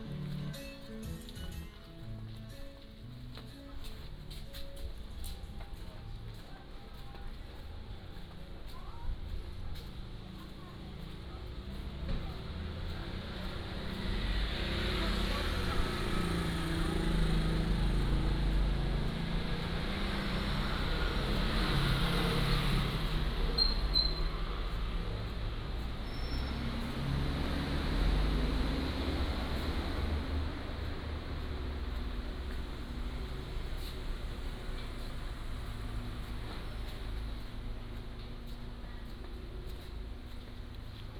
Fuxing Rd., Jinhu Township - small Town
Town, Shopping Street, In front of convenience stores, Traffic Sound
China 中国, 3 November 2014, 15:51